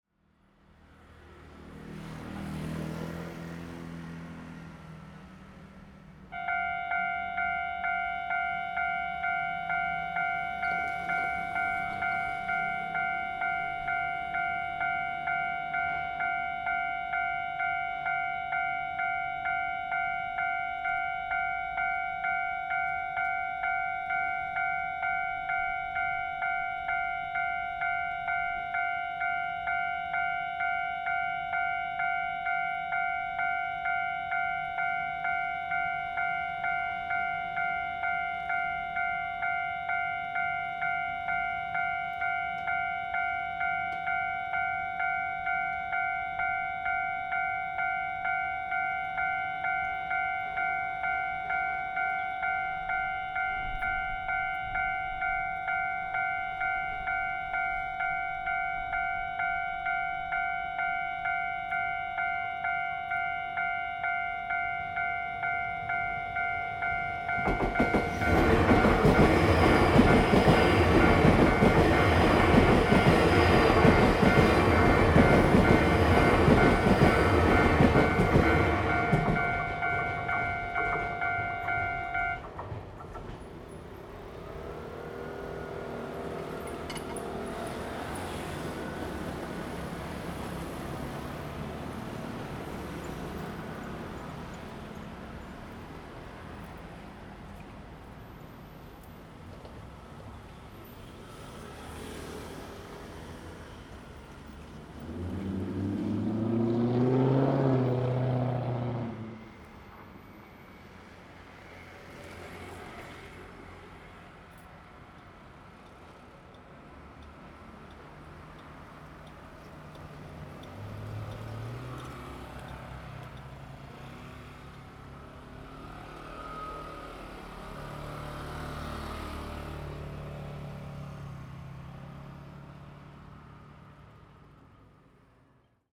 Deyu Rd., Zhongli Dist. - railway level road
In the railway level road, Traffic sound, Train traveling through
Zoom H2n MS+XY
Taoyuan City, Taiwan, 7 February 2017, ~16:00